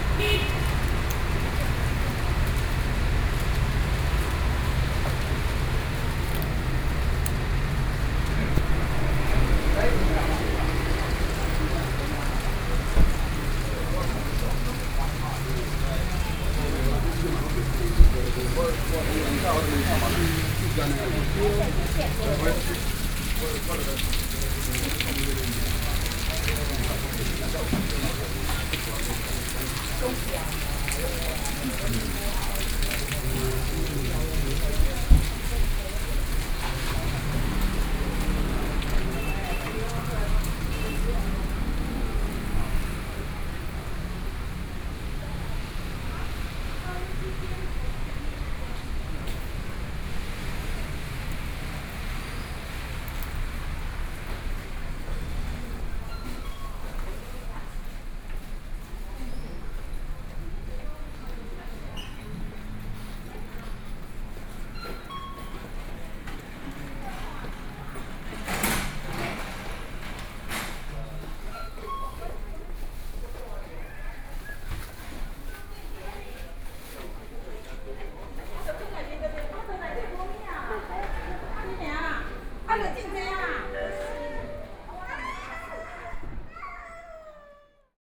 Zhongzheng S. Rd., Luodong Township - Rainy Day
Rainy Day, Went out from the hospital after hospital building to another building, Zoom H4n+ Soundman OKM II